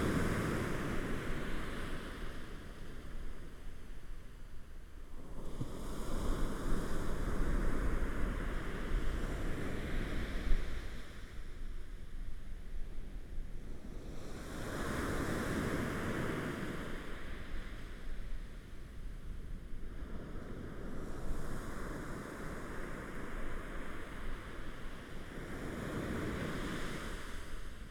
Hualien City, Taiwan - Sound of the waves
Sound of the waves, Zoom H4n+Rode NT4
Hualien County, Hualian City, 花蓮北濱外環道